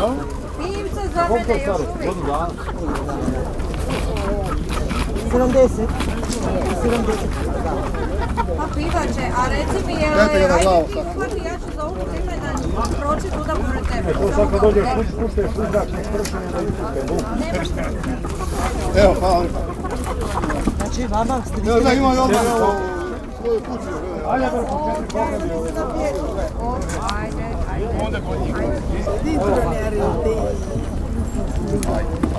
Benkovac, Benkovački sajam, Kroatien - living animals
The section of fowl, rabbits and dogs on the trade fair. Beside the sound of chicken, ducks, goose and songbirds you hear the strapping of adhesive tape, with which the cardboxes are closed, when an animal has been sold.